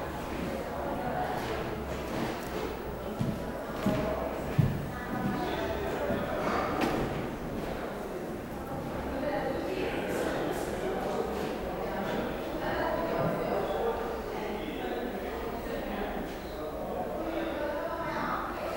Düsseldorf, Ehrenhof, nrw forum, exhibition preview - düsseldorf, ehrenhof, nrw forum, exhibition preview
preview walk in the exhibition catwalk
soundmap nrw: social ambiences/ listen to the people in & outdoor topographic field recordings